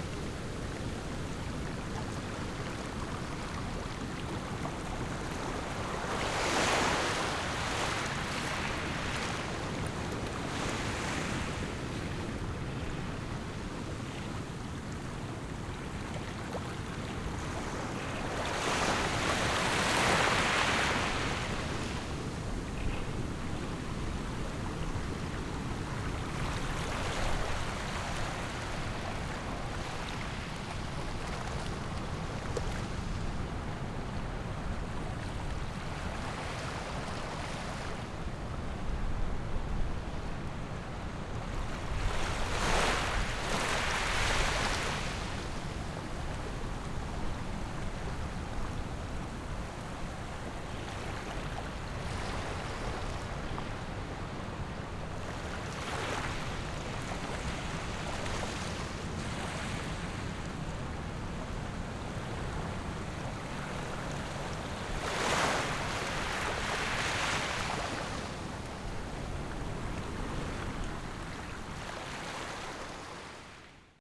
Small pier, sound of the waves
Zoom H6 +Rode NT4
Taitung County, Lanyu Township, 29 October 2014, 13:24